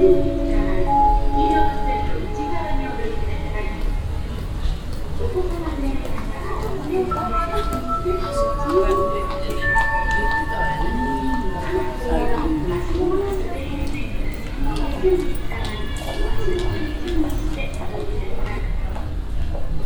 yokohama, landmark tower, sound installation
A kind of sound installation, appearing temporary and maybe clock based in the open space between two huge shopping malls.
Here recorded at noon of a windy and hot summer day. Parallel with the installation sound the automatic voice welcome and elevator warning.
international city scapes - topographic field recordings and social ambiences